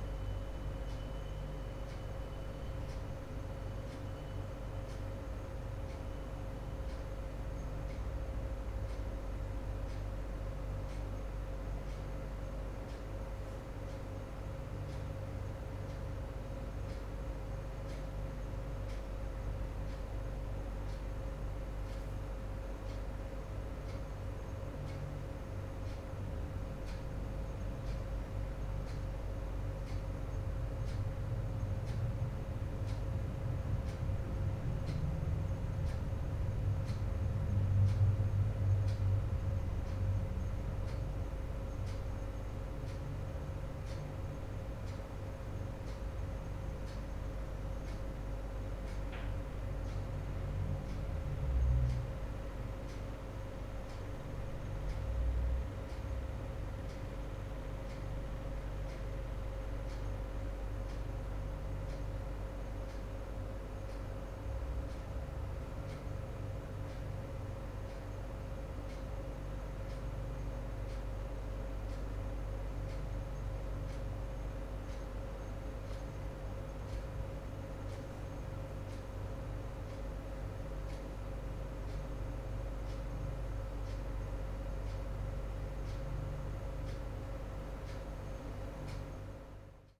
Poznan, Jezyce district, at the office - minutes before opening hours
ambience in the help desk room half an hour before working hours. no one has arrived yet. a sound blend of a few working computers, water cooler thermostat, air conditioning, idling printer, street traffic, wall clock and sparse sounds coming form another room.
18 July, ~08:00